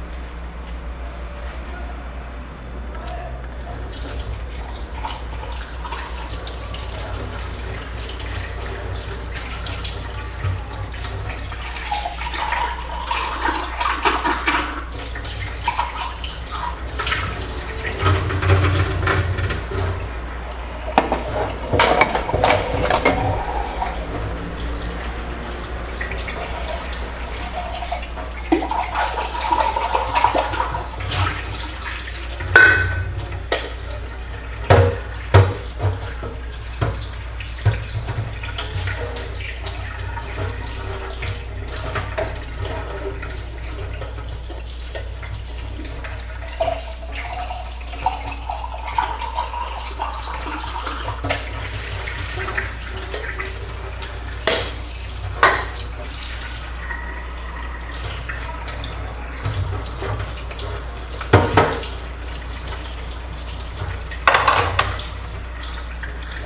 São Domingos, Niterói - Rio de Janeiro, Brazil - Preparing breakfast. House of 9 women.
Preparing breakfast. House of 9 women.
Preparando café da manhã. Na casa das 9 mulheres.